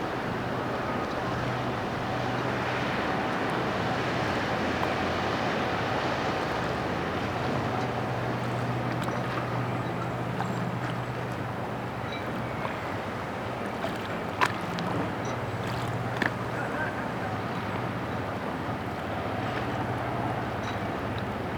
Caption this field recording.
Seagulls, crows, a couple of small fishing boats creating small swell against harbour wall, clinking of rigging against masts of a few small yachts, distant chatter, car passing behind, hedge trimmer. Recorded on a Roland R-26 using the inbuilt Omni and X-Y microphones. 10/08/2021